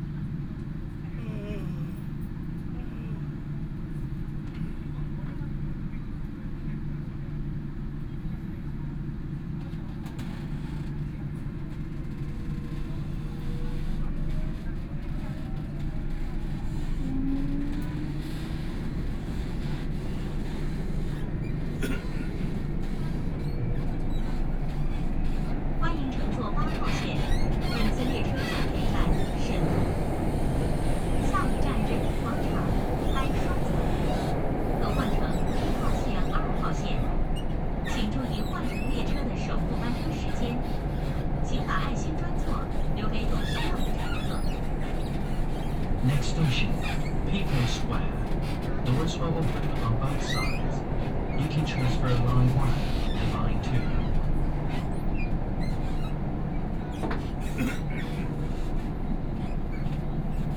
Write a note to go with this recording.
from Qufu Road station to Dashijie station, Binaural recording, Zoom H6+ Soundman OKM II